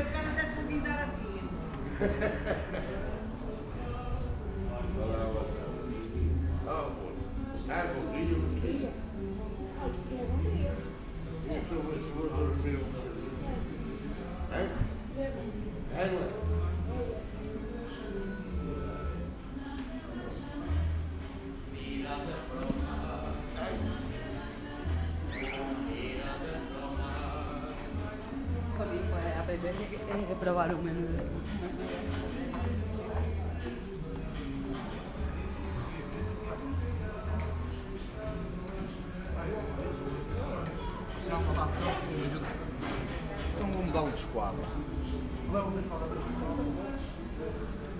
Porto, R. de São Bento da Vitória - street ambience
street ambience before noon
Oporto, Portugal, 12 October 2010, 11:45